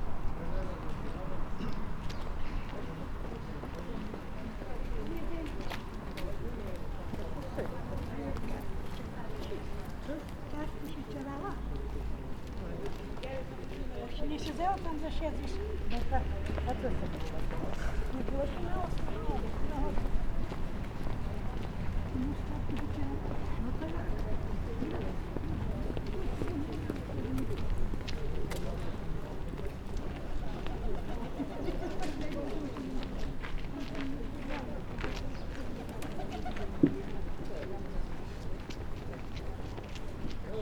Levoča, Levoča, Slovakia - Morning on Master Paul's Square
Quiet sunny morning on Master Paul's Square in Levoča. A mess in nearby St. Jacob's church is comming to an end - church doors open, people are walking home and chatting.
Prešovský kraj, Východné Slovensko, Slovensko, 15 September 2021